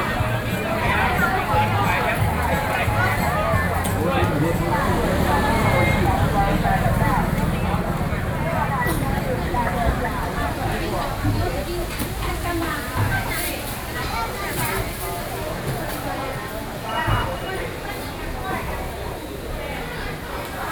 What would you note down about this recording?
Walking in the traditional market, Rode NT4+Zoom H4n